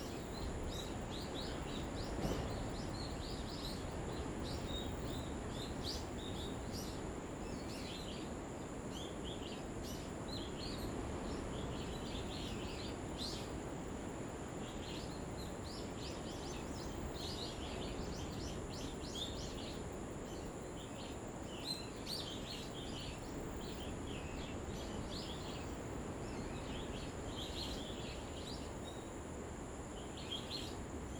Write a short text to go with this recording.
Birds singing, Sound of the waves, Zoom H2n MS +XY